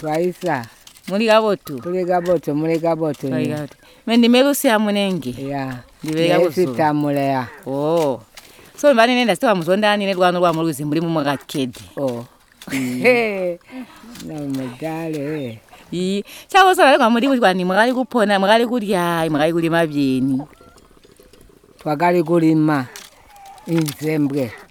Sikalenge, Binga, Zimbabwe - Our life as women of the Great River...
Lucia Munenge visits and interviews three old ladies who live together as they were married to the same husband. Ester Muleya describes the lives of women and girls, when the BaTonga were still living at the Zambezi river. She was a girl at the time of their forceful removal from the river by the colonial government in 1957. She describes the two farming season, the Batonga used to follow at the river and the staple crops they used to plant: Maize, pumpkin and Tonga beans near the river; Millet, Maize and Sorghum far away from the river when it was flooding during the rainy season. Ester mentions and recounts the process of purifying cooking oil from roasted and pounded pumpkin seeds; using either the powder directly in cooking or, boiling the powder in water to extract the oil. Ester describes the bead ornaments which the BaTonga women and girls used to be wearing - necklaces, bungles and earrings – especially when dancing and singing.